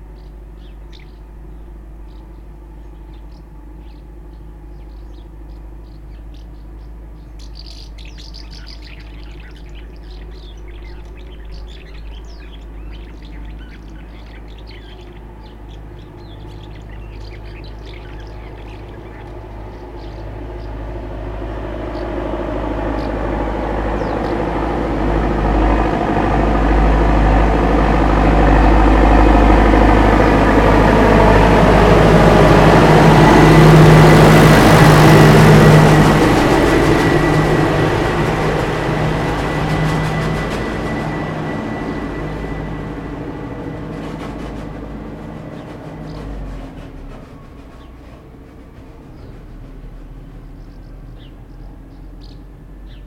Près d'un nid d'hirondelles sous un toit, c'est la campagne, passage d'un engin agricole.